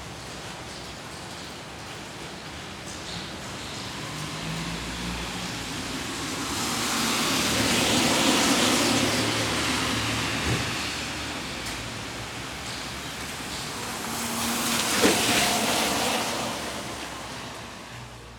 Maribor, Zitna ulica - rain tunnel resonance
strange metallic effects as the falling rain resonates in a carport tunnel into a new building's countryard